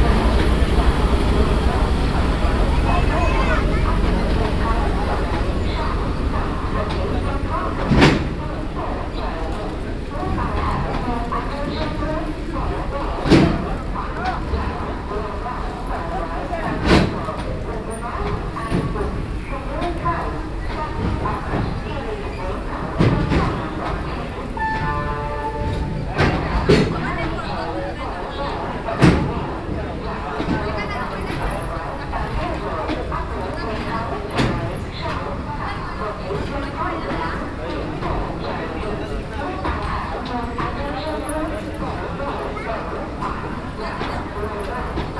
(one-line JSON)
{"title": "Madgaon, railway station1", "date": "2009-11-08 19:25:00", "description": "India, Goa, Madgaon, Madgoa, railway station, train", "latitude": "15.27", "longitude": "73.97", "altitude": "10", "timezone": "Asia/Calcutta"}